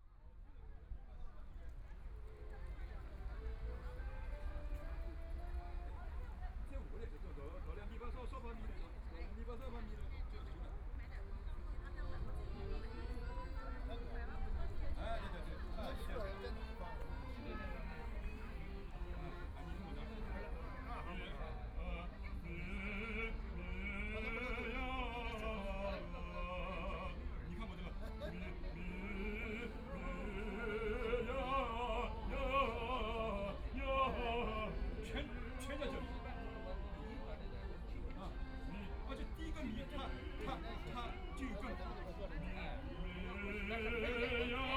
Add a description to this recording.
A group of elderly people are practicing singing chorus, Binaural recording, Zoom H6+ Soundman OKM II ( SoundMap20131122- 6 )